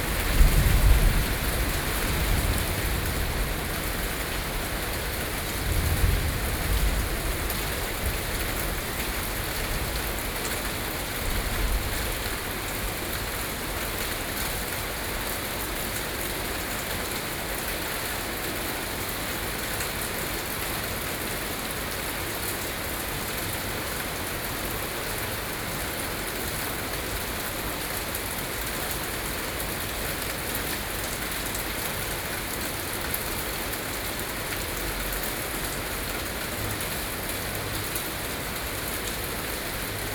Beitou - Early morning thunderstorms
Early morning thunderstorms, Sony PCM D50 + Soundman OKM II